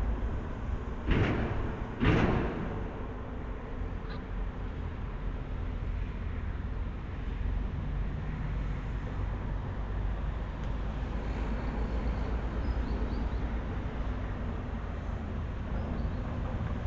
Küstrin, bridge traffic
traffic on bridge over river oder, border between germany and poland